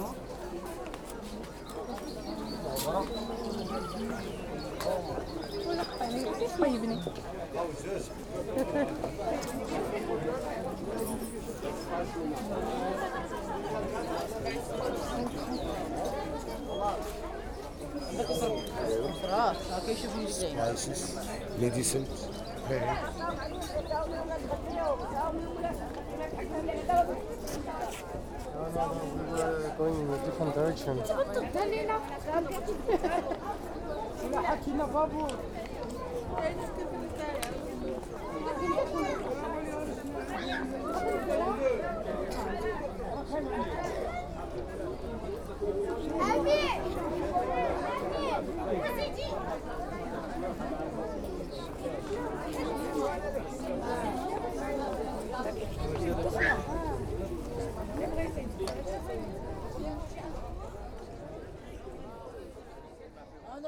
Rahba Kedima, Marrakesh, Marokko - market walk
Marrakesh, Median, walk over market area at Rahba Kedima
(Sony D50, DPA4060)
Marrakesh, Morocco